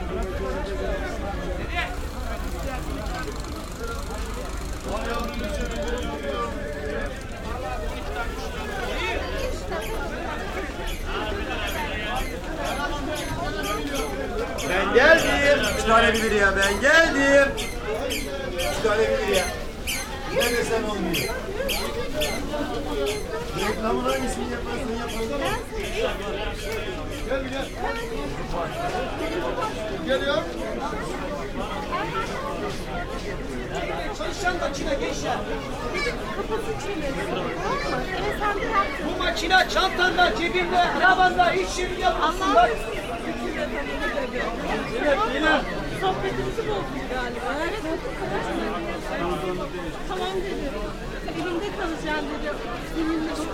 Istanbul Galata Bridge soundwalk
recording of the walk from the market tunnel on to the lower deck of the bridge